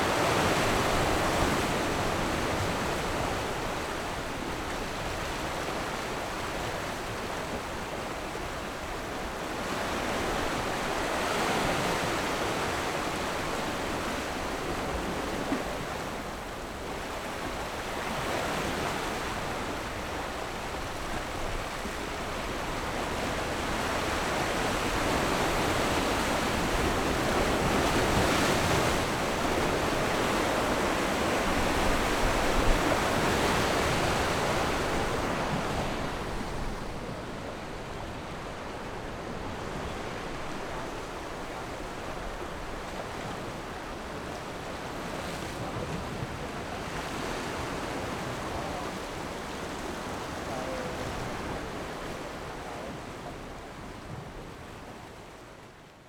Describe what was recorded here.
Sound wave, On the rocky coast, Abandoned military sites, Tourists, Zoom H6 +Rode NT4